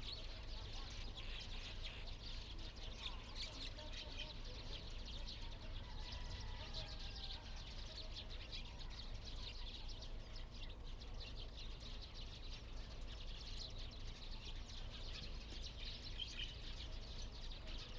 北寮村, Huxi Township - Birds singing
Birds singing, Chicken sounds
Zoom H2n MS+XY